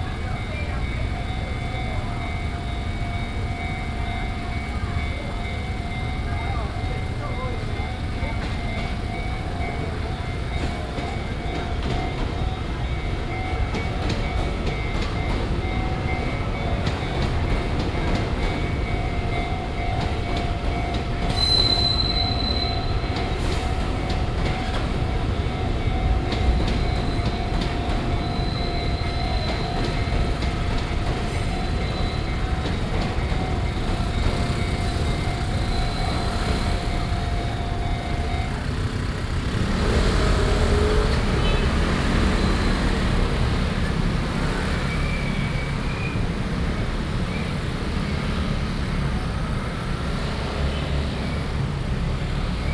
Chenggong 1st Rd., 基隆市仁愛區 - Traditional Market
Traffic Sound, Walking through the market, Walking on the road, Railway crossings, Traveling by train
Keelung City, Taiwan, August 2016